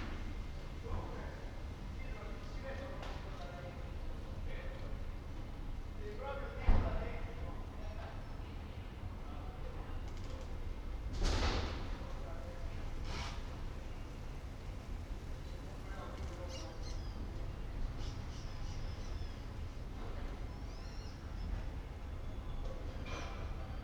"Friday afternoon June 5th with less laughing students and wind in the time of COVID19" Soundscape
Chapter XCVIII of Ascolto il tuo cuore, città. I listen to your heart, city
Friday June 5th 2020. Fixed position on an internal terrace at San Salvario district Turin, eighty-seven days after (but day thirty-three of Phase II and day twanty of Phase IIB and day fourteen of Phase IIC) of emergency disposition due to the epidemic of COVID19.
Start at 3:43 p.m. end at 4:09 p.m. duration of recording 25’46”
Torino, Piemonte, Italia, June 2020